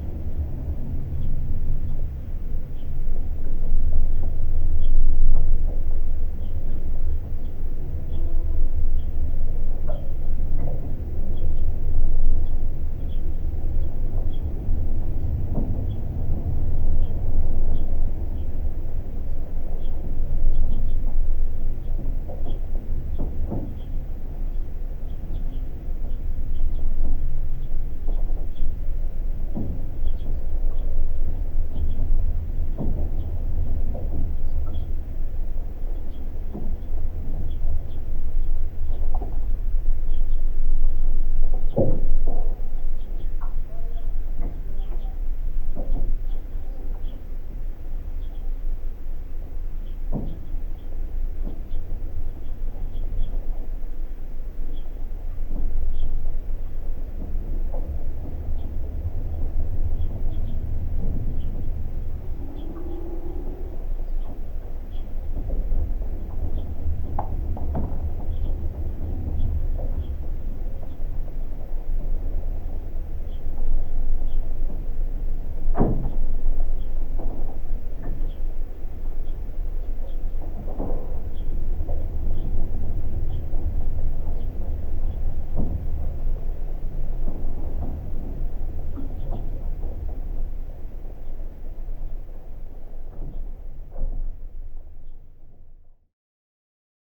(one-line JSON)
{"title": "Gaigaliai, Lithuania, old watertower", "date": "2021-02-16 16:25:00", "description": "old watertower covered with frozen water and icicles. mild wind. recorded with geophone. very quiet tower - I had to boost sound a little bit...", "latitude": "55.60", "longitude": "25.60", "altitude": "142", "timezone": "Europe/Vilnius"}